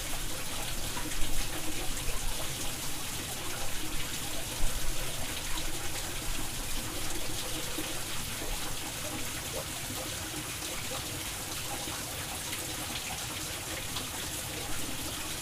Trehörningsjö, under vattenkvarnen - Under the watermill
Under the old water mill house, just before the water stream is opened. Recording made during soundwalk on World Listening Day, 18th july 2010.
Sweden